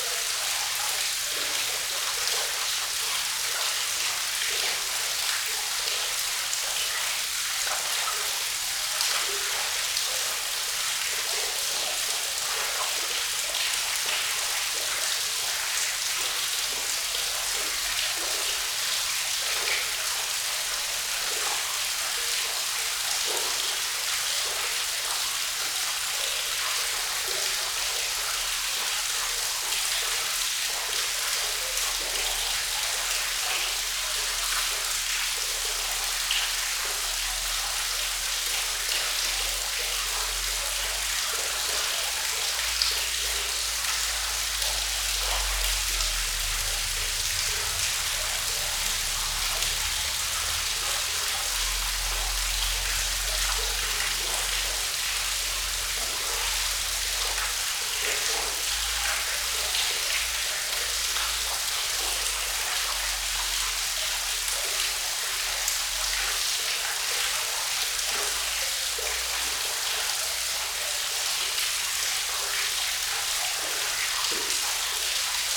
Kožbana, Dobrovo v Brdih, Slovenia - Krčnik gorge
Stream Krčnik in a gorge with waterfall. Microphones were hanging in the air. Microphones: Lom Uši Pro.